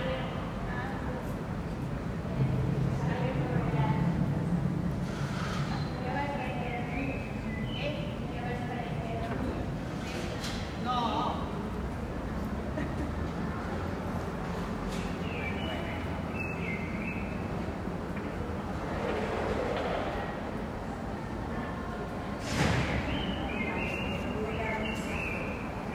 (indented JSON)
{
  "title": "Carrer de Mallorca, Barcelona, España - Lockdown: Ambient noise",
  "date": "2020-04-05 20:00:00",
  "description": "Made from a building during lockdown. People talking, cars, birds, dogs.",
  "latitude": "41.39",
  "longitude": "2.15",
  "altitude": "47",
  "timezone": "Europe/Madrid"
}